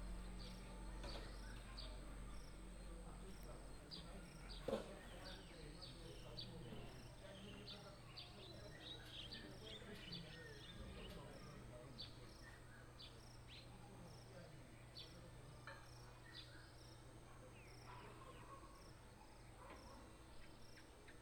歷坵部落, 金峰鄉金崙林道 - In the streets of Aboriginal tribes
In the streets of Aboriginal tribes, Bird cry, traffic sound, Dog barking